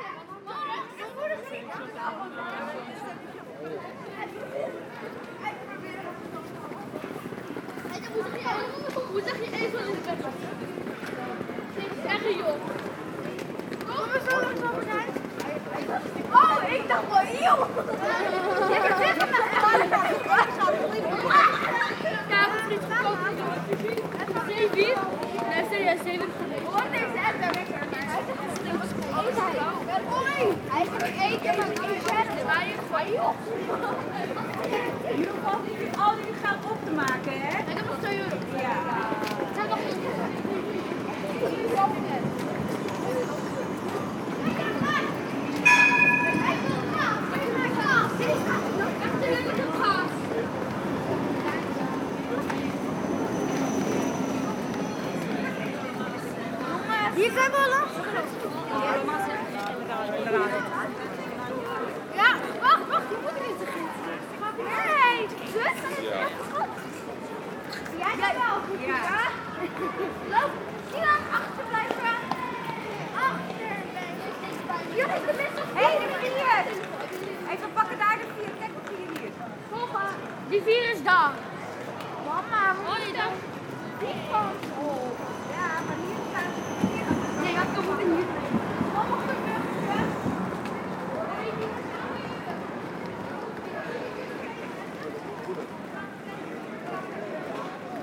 Lively street ambiance into one of the main commercial street of the center of Amsterdam.
Amsterdam, Netherlands, 28 March 2019